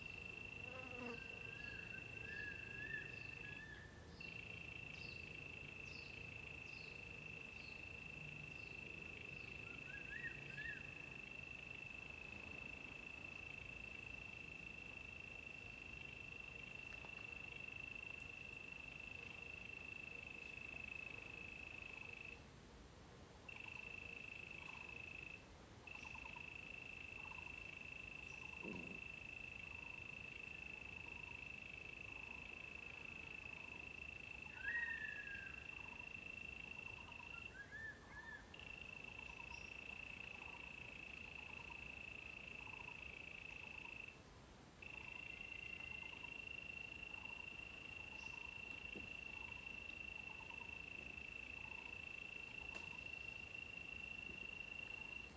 草楠, 桃米里 - bird and Insect sounds
bird and Insect sounds, in the woods
Zoom H2n MS+XY
Puli Township, Nantou County, Taiwan, 5 May, 09:59